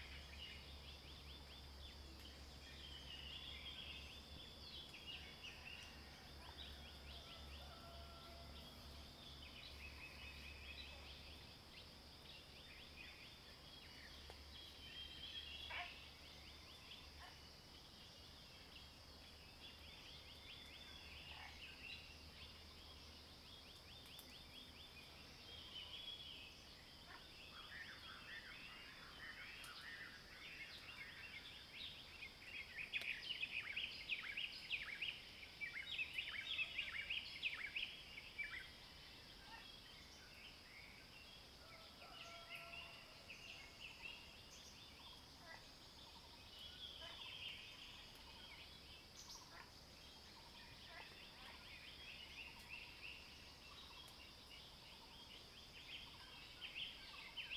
TaoMi 綠屋民宿, Nantou County - Early morning
Crowing sounds, Bird calls, Frogs chirping, Early morning
Zoom H2n MS+XY
Nantou County, Taiwan